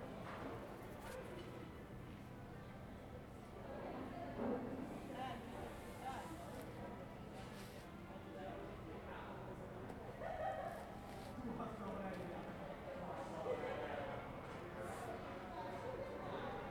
Ascolto il tuo cuore, città, I listen to your heart, city. Several chapters **SCROLL DOWN FOR ALL RECORDINGS** - Three ambiances April 25 in the time of COVID19 Soundscape
"Three ambiances April 25 in the time of COVID19" Soundscape
Chapter LVI of Ascolto il tuo cuore, città. I listen to your heart, city
Saturday April 25th 2020. Fixed position on an internal terrace at San Salvario district Turin, forty six days after emergency disposition due to the epidemic of COVID19.
Three recording realized at 11:00 a.m., 6:00 p.m. and 10:00 p.m. each one of 4’33”, in the frame of the project (R)ears window METS Cuneo Conservatory) (and maybe Les ambiances des espaces publics en temps de Coronavirus et de confinement, CRESSON-Grenoble) research activity.
The thre audio samplings are assembled here in a single audio file in chronological sequence, separated by 7'' of silence. Total duration: 13’53”
Torino, Piemonte, Italia